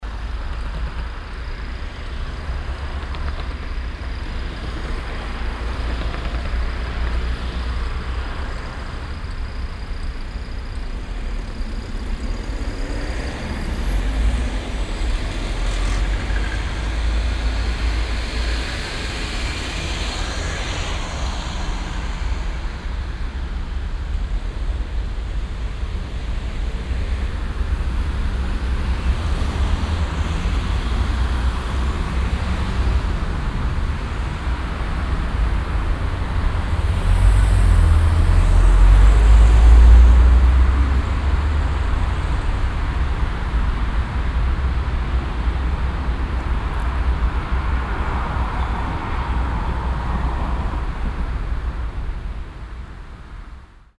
Strassenverkehr am Ampelübergang am frühen Nachmittag
soundmap nrw: topographic field recordings & social ambiences